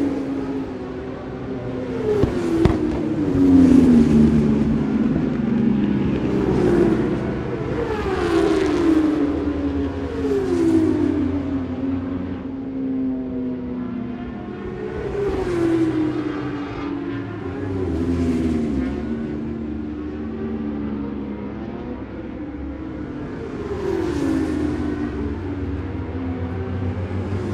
Scratchers Ln, West Kingsdown, Longfield, UK - British Superbikes 2005 ... FP2 ...
British Superbikes 2005 ... FP 2 ... audio technica one point stereo mic ...
26 March 2005, ~20:00